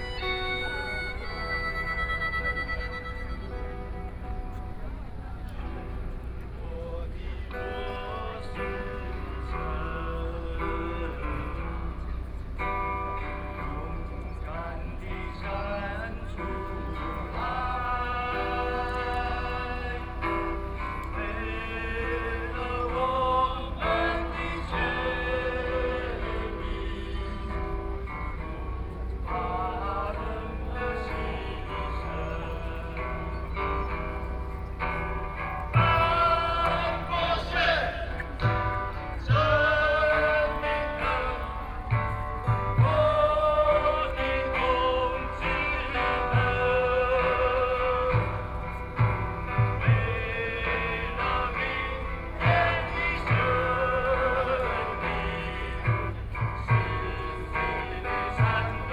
National Dr. Sun Yat-sen Memorial Hall - Workers protest
Workers protest, Sony PCM D50 + Soundman OKM II